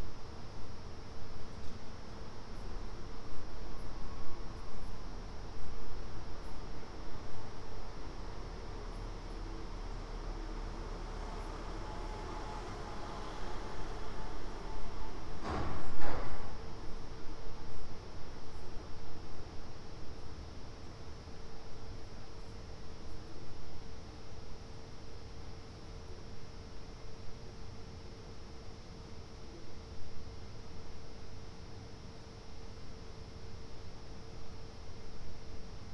"Round midnight first day of students college closing in the time of COVID19" Soundscape
Chapter CXXII of Ascolto il tuo cuore, città. I listen to your heart, city
Sunday, August 2nd 2020, four months and twenty-two days after the first soundwalk (March 10th) during the night of closure by the law of all the public places due to the epidemic of COVID19.
Start at 00:55 a.m. end at 01:29 a.m. duration of recording 33’47”
The students college (Collegio Universitario Renato Einaudi) closed the day before for summer vacation.
About 30 minutes of this recording are recorded on video too (file name )
Go to previous similar situation, Chapter CXXI, last day of college opening
Piemonte, Italia, 2 August 2020, ~01:00